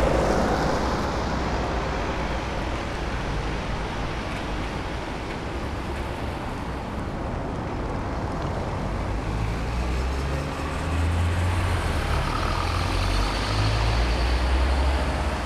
Berlin: Vermessungspunkt Friedelstraße / Maybachufer - Klangvermessung Kreuzkölln ::: 24.12.2011 ::: 15:08